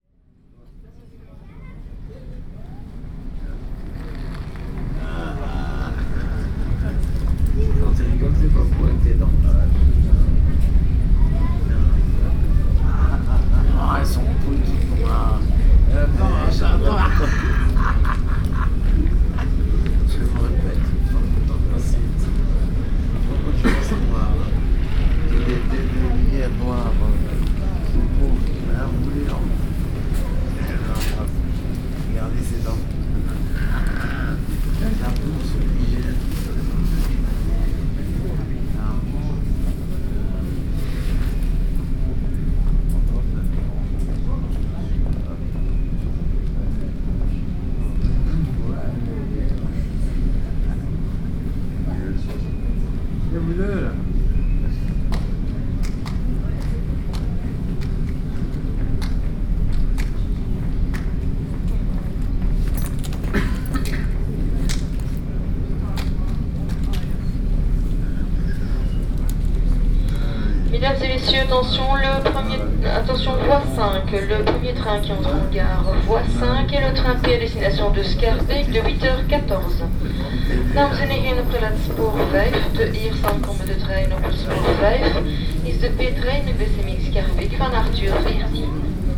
{
  "date": "2008-03-25 07:48:00",
  "description": "Brussels, Midi Station, his rotten teeth",
  "latitude": "50.84",
  "longitude": "4.33",
  "altitude": "26",
  "timezone": "Europe/Brussels"
}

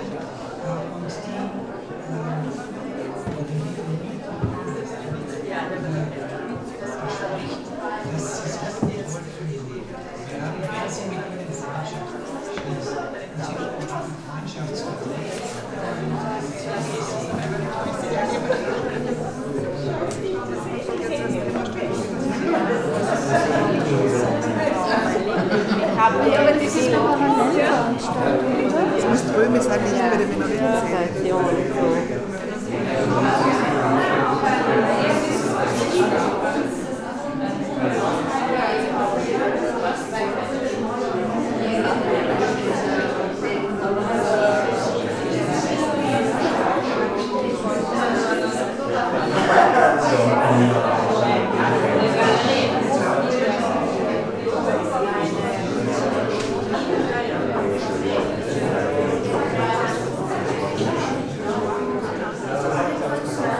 {
  "title": "Kulturzentrum bei den Minoriten, Graz - voices",
  "date": "2015-01-21 19:28:00",
  "description": "exhibition opening, shifting constellations",
  "latitude": "47.07",
  "longitude": "15.43",
  "altitude": "358",
  "timezone": "Europe/Vienna"
}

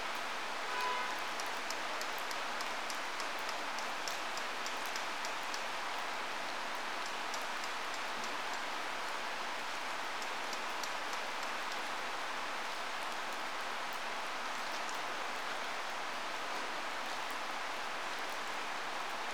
{
  "title": "Middlebrook Ave, Staunton, VA, USA - Waiting for a Train",
  "date": "2018-06-20 02:30:00",
  "description": "Once a busy station, Staunton is now a whistle stop. Recorded on a somewhat sweltering weekday afternoon about fifteen feet from the tracks and maybe ten times that distance to the Middlebrook Avenue. One can hear the sound of the original station building being renovated for the sake of a new business, traffic on the street, a gentle summer shower and its stillicide dripping from the canopy. In its heyday this was a bustling place. It may bustle again in the future, but for now it is like an eddy somewhat apart from the main stream of life and traffic through downtown, with only a freight train or two each day and six passenger trains each week.",
  "latitude": "38.15",
  "longitude": "-79.07",
  "altitude": "437",
  "timezone": "GMT+1"
}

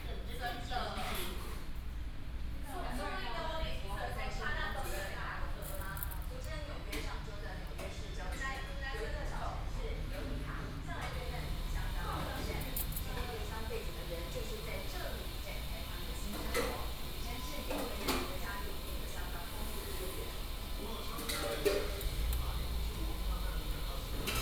{
  "title": "瑞芳鴨肉麵, Xinfeng Township - The duck noodle shop",
  "date": "2017-02-07 13:13:00",
  "description": "The duck noodle shop, The waiters chatted with each other",
  "latitude": "24.87",
  "longitude": "120.99",
  "altitude": "62",
  "timezone": "GMT+1"
}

